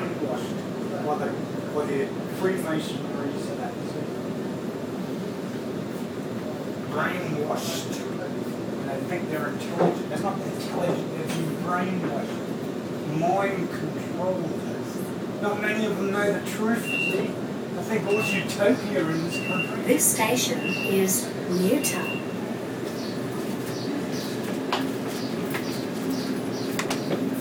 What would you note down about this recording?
conspiracy ramblings from a fellow commuter on the train